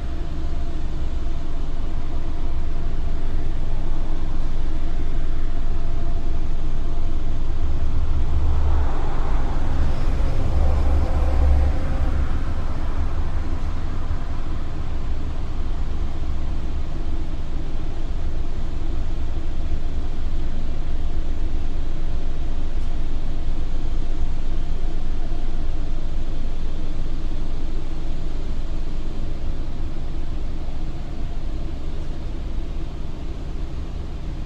Cockerill-Sambre blast furnace plant and the noise of the crowd at a soccer match on the opposite bank of the river at Standard de Liège. Zoom H2.
14 November 2008, ~16:00